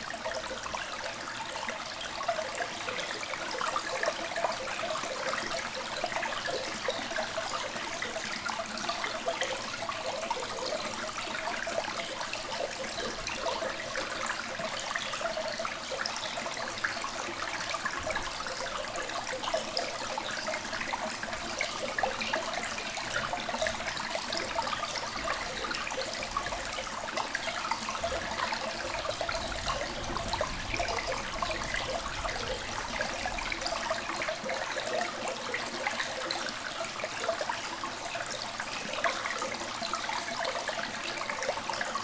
Montagnole, France - Small river in the mine
Into a cement underground mine, a small river is flowing. It's going in an hole, what we call in spelunking french word "a loss".